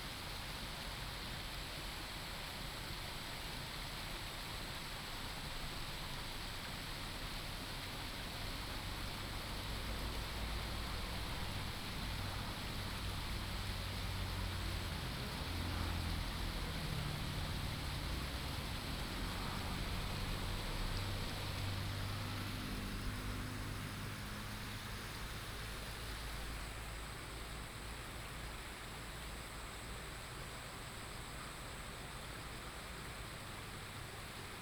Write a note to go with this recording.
birds call, stream, Traffic sound